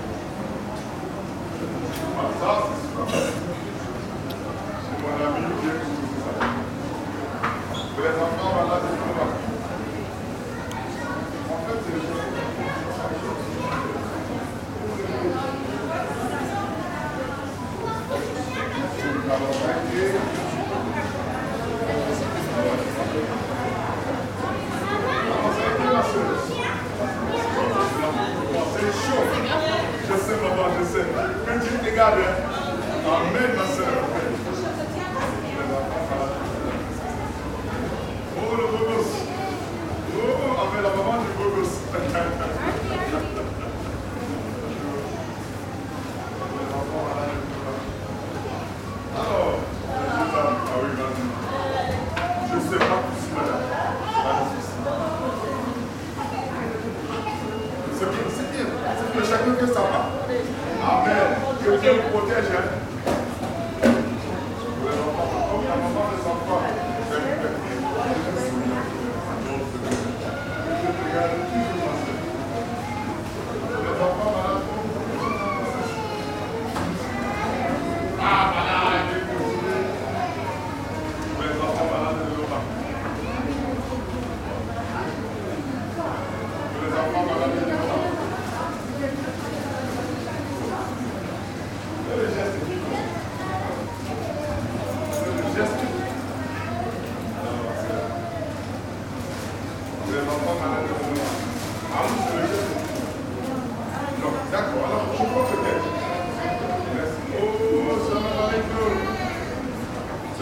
Chau. d'Ixelles, Ixelles, Belgique - Underground gallery ambience
A man is collecting money to prevent Noma disease.
Tech Note : Sony PCM-M10 internal microphones.